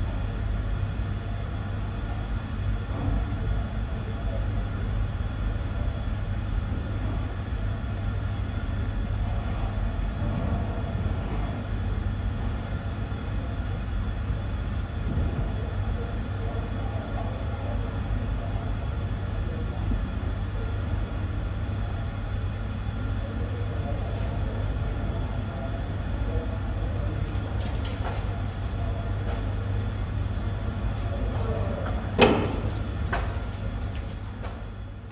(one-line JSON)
{"title": "Nördliche Innenstadt, Potsdam, Germany - Voices through the heating system", "date": "2016-10-24 14:09:00", "description": "Heating pipes often carry sound around buildings. Here the voices of people in the Mensa cafe a floor below mix in the with the system hums and hisses.", "latitude": "52.40", "longitude": "13.06", "altitude": "41", "timezone": "Europe/Berlin"}